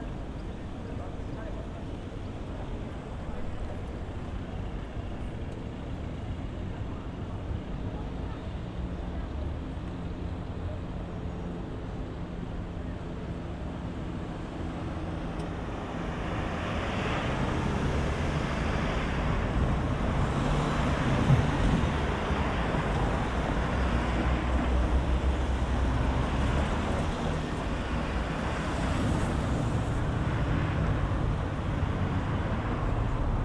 Berliner Pl., Bonn, Alemania - Green & Red
Nordrhein-Westfalen, Deutschland